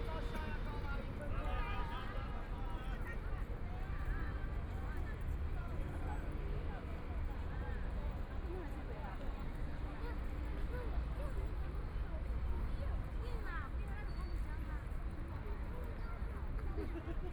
the Bund, Shanghai - Tourist area
Many tourists, The sound of the river boat, Binaural recordings, Zoom H6+ Soundman OKM II
Shanghai, China